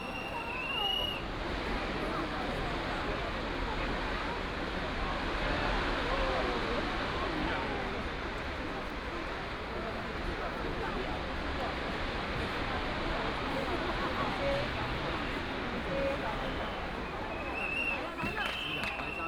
Baixi, 白沙屯, 通霄鎮 - In the railway level road
Matsu Pilgrimage Procession, Crowded crowd, Fireworks and firecrackers sound